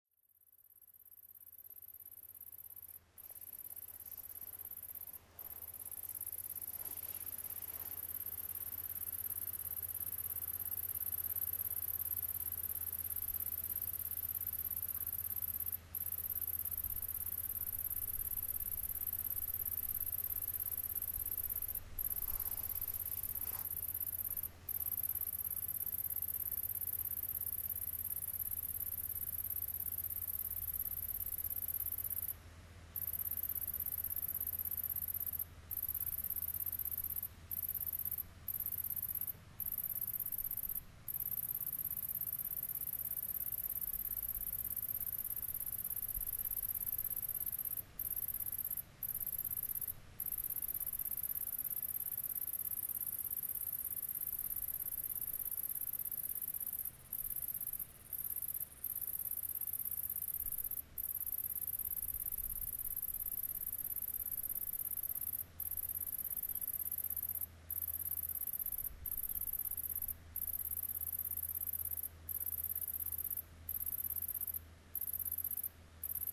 Chanceaux, France - Locusts
Near an sunny path in a green nature everywhere, locusts are singing into the grass, and stop baldly nobody knows why !
29 July 2017